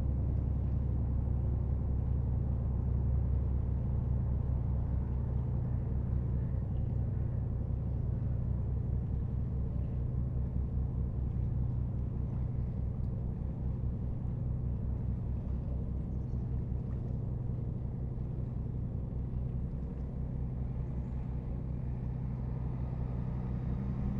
Le Pecq, France - Train
A train is driving on the bridge and an industrial boat is passing by on the Seine river.